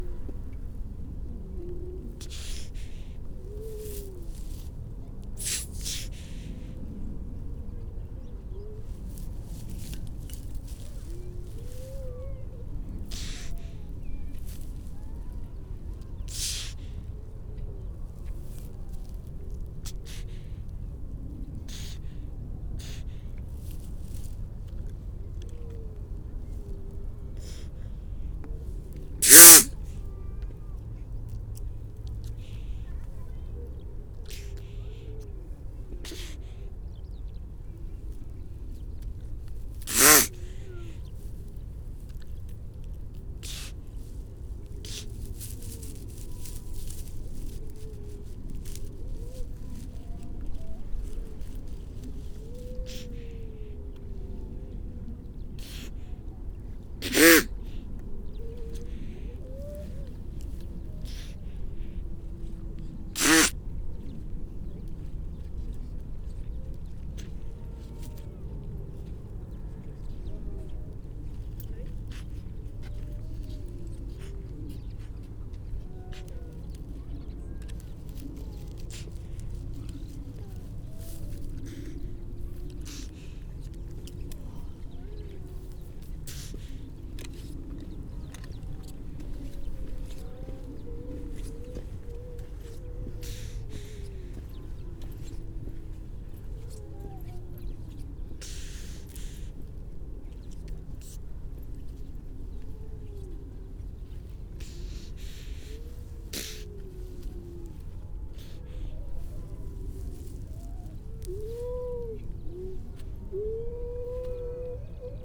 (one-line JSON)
{"title": "Unnamed Road, Louth, UK - grey seals soundscape ...", "date": "2019-12-03 10:43:00", "description": "grey seals soundscape ... parabolic ... a large pup having a snort and a sneeze ... bird call ... redshank ... skylark ... all sorts of background noise ...", "latitude": "53.48", "longitude": "0.15", "altitude": "1", "timezone": "Europe/London"}